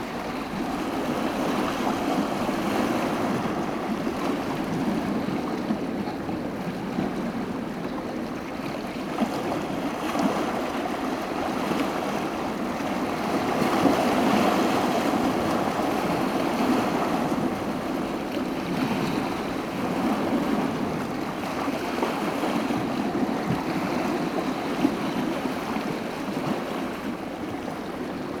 Newton Rd, Isle of Arran, UK - Lochranza
Walk on the coast near Lochranza on Isle of Arran in a lull between the storms. Recorded with Zoom H2n.